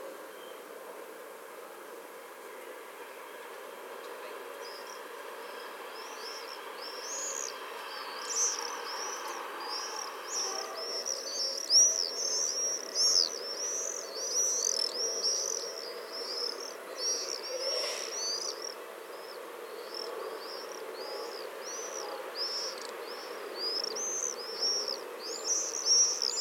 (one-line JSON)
{"title": "Tierney Rd, Streatham Hill, London, UK - Swifts over Streatham - Tierney Road", "date": "2019-07-04 21:00:00", "description": "Recorded with a parabolic microphone, swifts flying over Tierney Road, London", "latitude": "51.44", "longitude": "-0.13", "altitude": "53", "timezone": "Europe/London"}